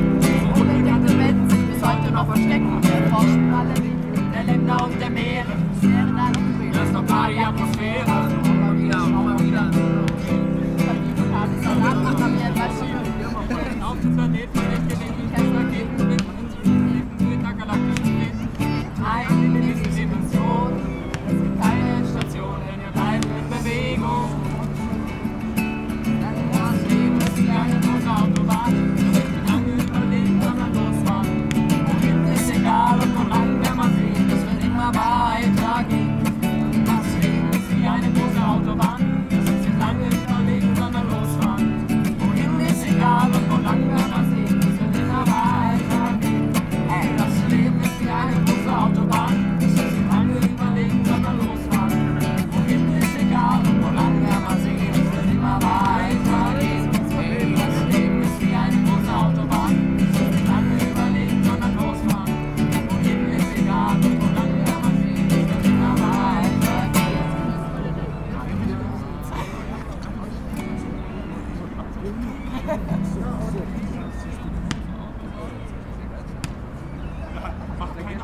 Innsbruck, vogelweide, Waltherpark, Österreich - Frühling im Waltherpark/vogelweide
walther, park, vogel, weide, musik, gitarre, singende menschen, das leben ist eine autobahn, reden, gespräche, lachen, fußball, heimliche aufnahme, flugzeug, gitarrengeplänkel, kinder, geschrei, waltherpark, vogelweide, fm vogel, bird lab mapping waltherpark realities experiment III, soundscapes, wiese, parkfeelin, tyrol, austria, anpruggen, st.
Innsbruck, Austria, 12 March